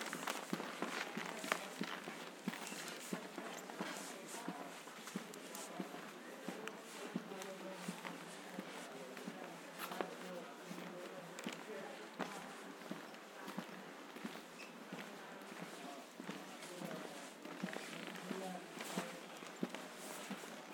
W 35th St, New York, NY, USA - Squeaky hardwood floor at Macy's
Squeaky sounds from an old hardwood floor at Macy's.
Recording made on the 9th floor.
United States